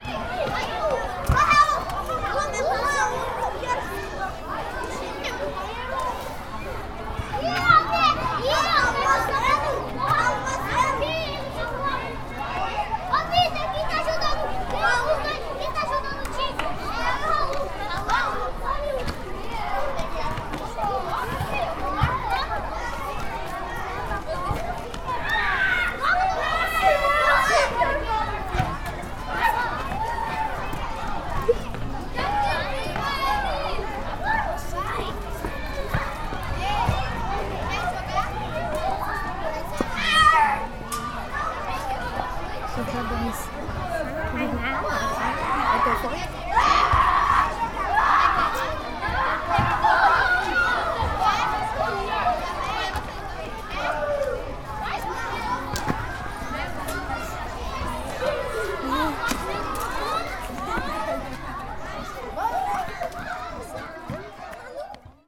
Crianças brincando no teatro de arena da escola Raul Pila durante o intervalo. Gravação realizada por alunos da do 4o ano da EEI Raul Pila com um gravador digital TASCAM DR 05.